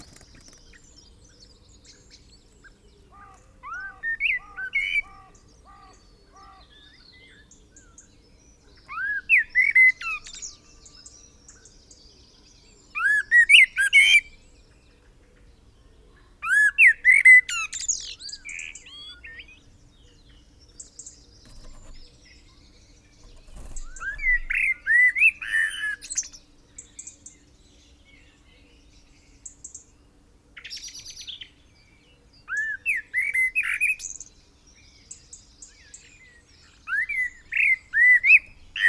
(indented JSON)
{
  "title": "Chapel Fields, Helperthorpe, Malton, UK - Clocks forward blackbird ...",
  "date": "2018-03-25 05:50:00",
  "description": "Clocks forward blackbird ... blackbird calls and song ... pair of spaced mics on chair ... blackbird was singing on the back of the chair for some time ... background noise from traffic and planes ...",
  "latitude": "54.12",
  "longitude": "-0.54",
  "altitude": "77",
  "timezone": "Europe/London"
}